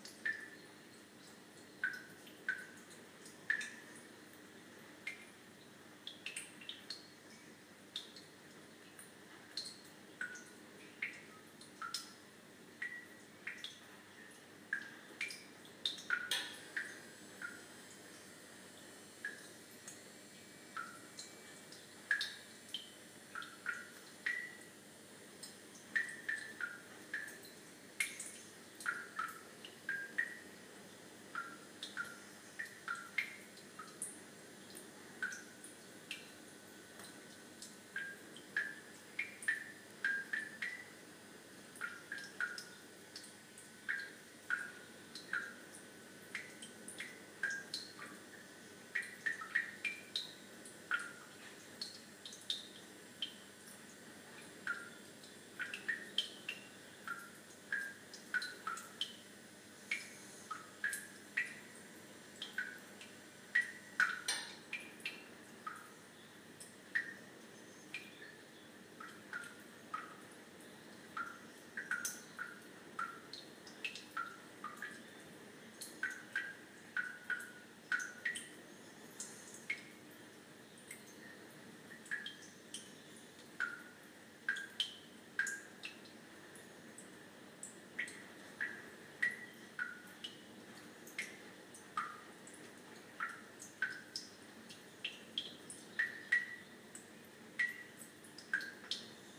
We took our tiny Eriba on the North Coast (of Scotland) 500 and holed up here for the night. It was raining really hard but I braved the wet to go for my late night pee in the campsite loos. It was deserted and as I stood there I was entertained by the tune being played in the very slow filling cistern: drip, driplet, drippity, drip, drip. It was great and a big contrast to the wild lashing rain outside. I used my iPhone 5 to record this mono track
Achnasheen, UK, 5 May 2016, 23:20